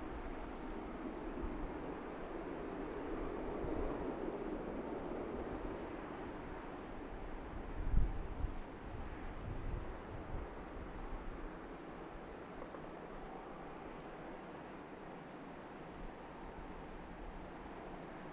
Fort Snelling State Park - MSP 30R Landings From Fort Snelling State Park
Landing aircraft at Minneapolis/St Paul International Airport on Runway 30R recorded from Fort Snelling State Park